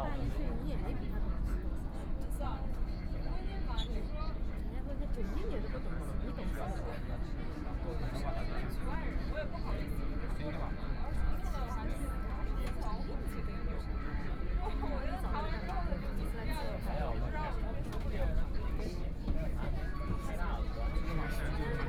from Jing'an Temple Station to People's Square Station, Binaural recording, Zoom H6+ Soundman OKM II
Jing'an, Shanghai, China, 23 November 2013, ~16:00